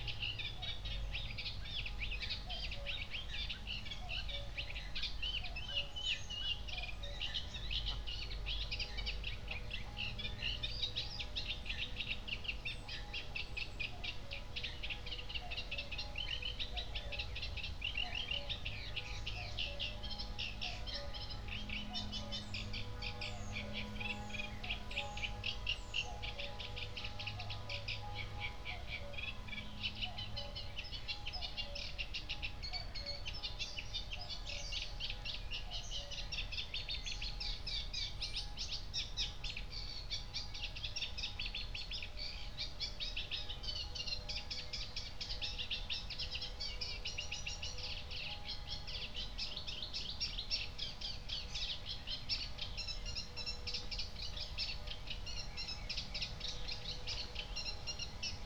09:27 Berlin, Buch, Mittelbruch / Torfstich 1 - pond, wetland ambience
Reed Warbler (Drosselrohrsänger) and Cuckoo (Kuckuck) among others, wind in reed, Sunday morning church bells
Deutschland, 16 May 2021, ~09:00